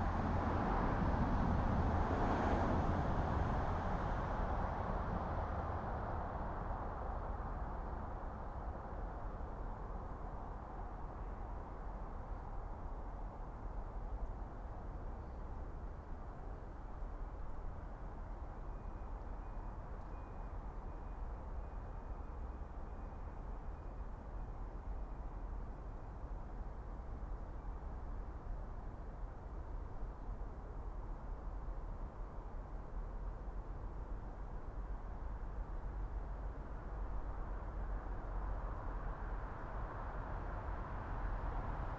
Saltwell Allotments, Gateshead, UK - Between Allotments and Saltwell Cemetery
Stood facing West and Team Valley. Distance sound of traffic from valley and A1. Cars, buses and lorries driving past behind on Saltwell Road. Recorded on Sony PCM-M10.